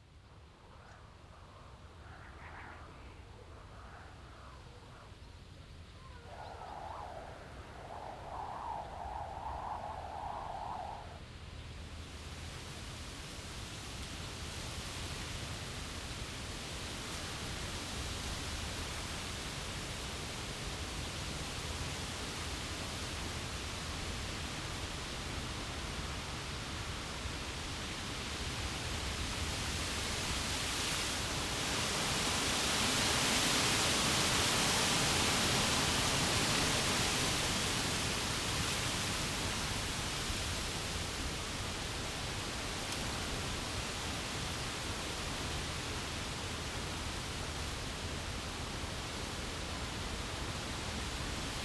An einem windigen Sommertag. Der Klang des Windes der den Berg hinaufweht und das Rascheln der Blätter der Bäume und Büsche.
At a windy summer day. The sound of wind coming up the hill and the rattling of leaves from the bushes and trees